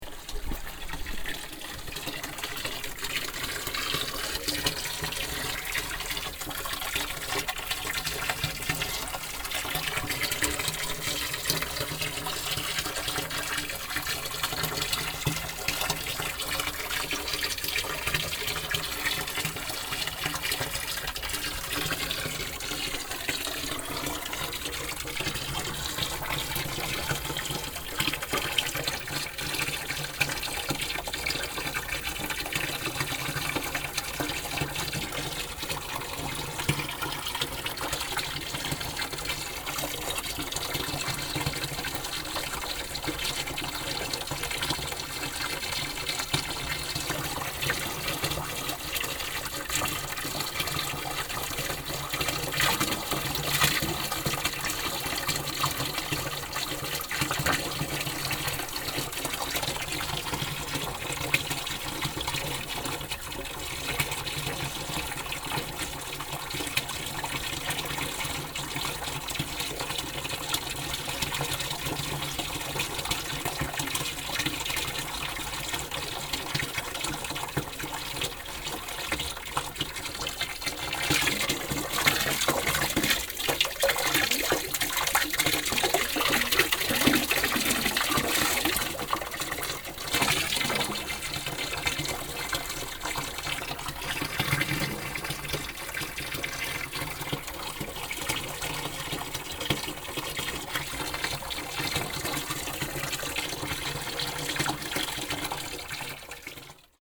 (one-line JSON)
{"title": "madonna del lago, water source fountain", "date": "2009-07-15 10:34:00", "description": "a water fountain providing water from a natural water source\nsoundmap international: social ambiences/ listen to the people in & outdoor topographic field recordings", "latitude": "44.12", "longitude": "7.99", "altitude": "1025", "timezone": "Europe/Berlin"}